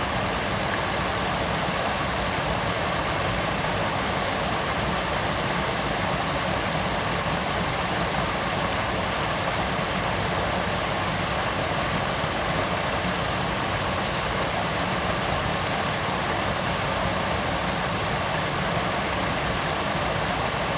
茅埔坑溪生態公園, Nantou County - Stream
Stream
Zoom H2n MS+XY